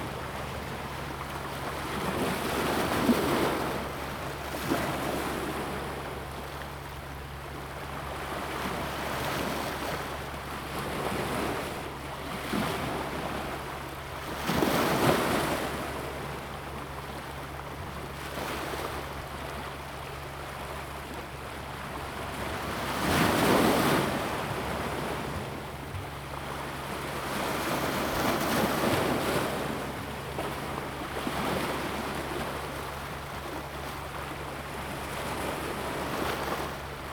Coastal block, at the seaside, Waves, High tide time, Wave block
Zoom H2n MS+XY